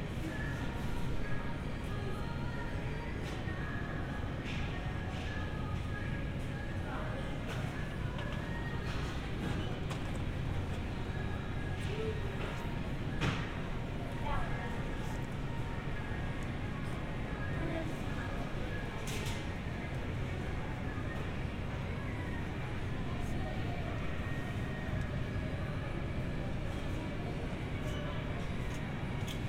Cumberland Pkwy SE, Atlanta, GA, USA - Shopping At The Supermarket
A quick round of shopping at the local Publix with a family member. Here you can listen to all the typical store sounds: barcode scanners beeping, shopping carts, some faint music in the background, etc. The store was less busy than usual because of the time of night and people were remaining socially distanced. This was recorded with a pair of Roland CS-10EM binaural earbuds connected to the Tascam DR-100mkiii, which I kept in my sweatshirt pocket. User interference was kept to a minimum, although a few breathing/mouth sounds may have come through in parts of the recording due to the mics being mounted directly to my head.